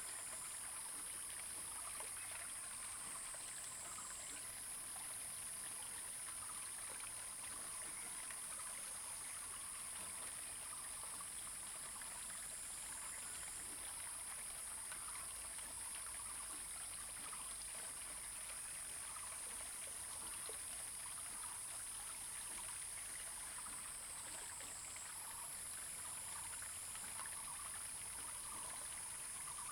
明峰村, Beinan Township - Stream and Frogs
Birdsong, Traffic Sound, Stream, Frogs sound
Zoom H2n MS +XY